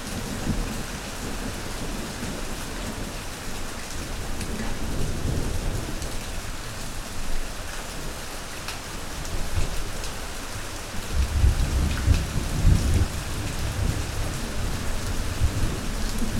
R. Geira, Portugal - Rain Thunderstorm - Rain and Thunderstorm - Campo Geres
Heavy rain with thunderstorm at campo de Geres, recorded with SD mixpre6 and a pair of primo 172 omni mics in AB stereo configuration.